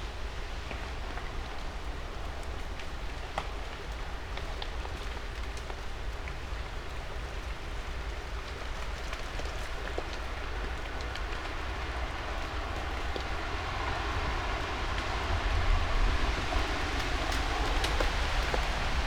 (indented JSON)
{
  "title": "path of seasons, first pond, park, maribor - ice flux",
  "date": "2014-02-05 17:55:00",
  "latitude": "46.57",
  "longitude": "15.65",
  "timezone": "Europe/Ljubljana"
}